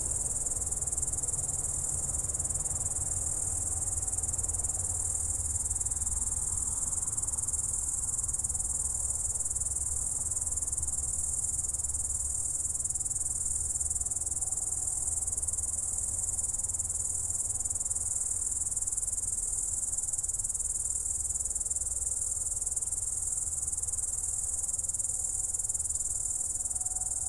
Kelmė, Lithuania, night at cemetery
standing at cemetery and listening to night's soundscape
Kelmės rajono savivaldybė, Šiaulių apskritis, Lietuva, 23 July